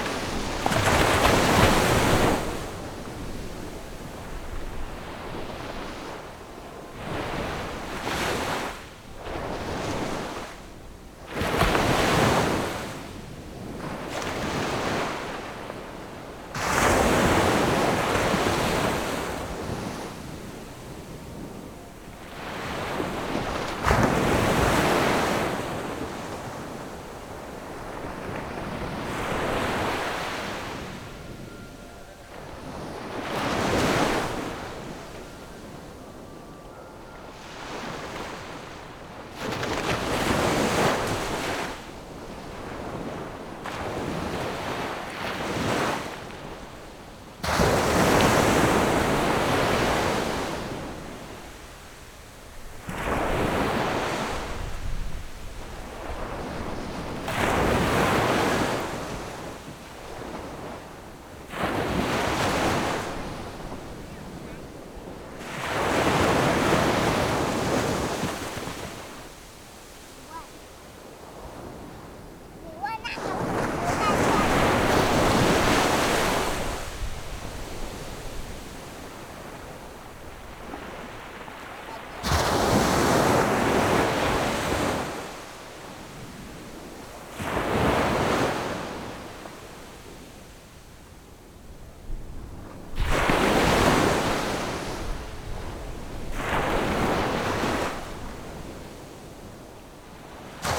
At the beach, Windy, Sound of the waves
Zoom H6+Rode NT4
Penghu County, Magong City, 201縣道, 23 October 2014